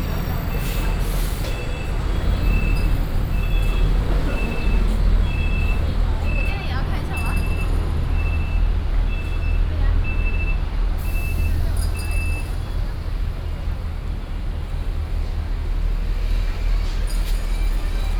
Jianguo Rd., Central Dist., 台中市 - Walking through the road
Walking through the road, Traffic Sound, bus station
Taichung City, Taiwan, 6 September, 17:42